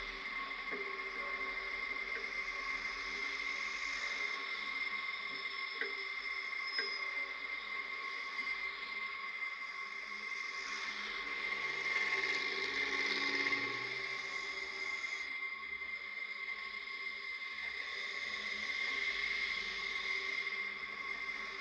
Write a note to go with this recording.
Dual contact microphone recording of a street light pole. Trolleybus electricity lines are also hanging attached to this pole. Resonating hum and traffic noises are heard, as well as clattering noise from a trolleybus passing by.